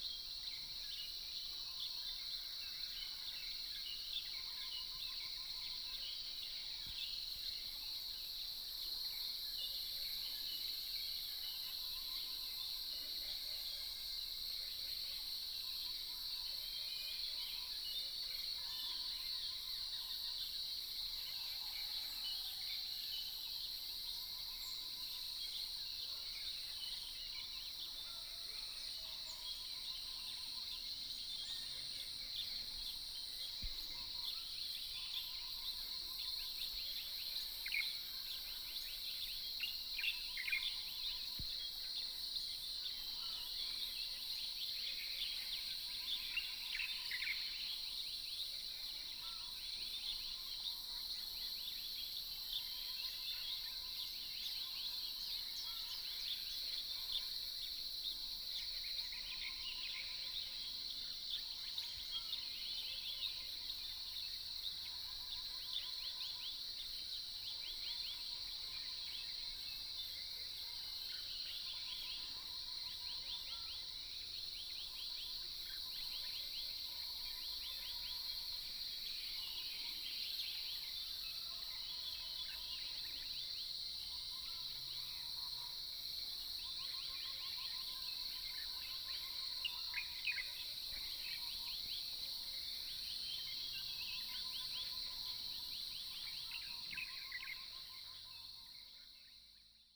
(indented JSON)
{
  "title": "Zhonggua Rd., Puli Township, Nantou County - Early morning",
  "date": "2015-06-11 05:07:00",
  "description": "Early morning, Bird calls, Croak sounds, Insects sounds",
  "latitude": "23.94",
  "longitude": "120.92",
  "altitude": "503",
  "timezone": "Asia/Taipei"
}